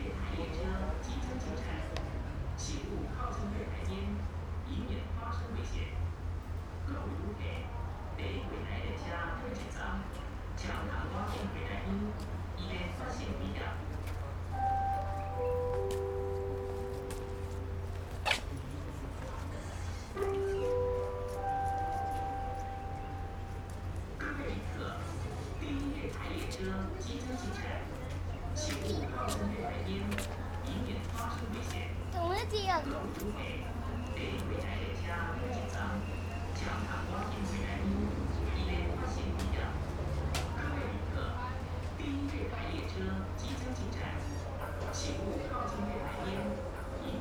Zuoying Station - Waiting
in the Station platforms, Station broadcast messages, Train traveling through, Rode NT4+Zoom H4n
高雄市 (Kaohsiung City), 中華民國, 3 March 2012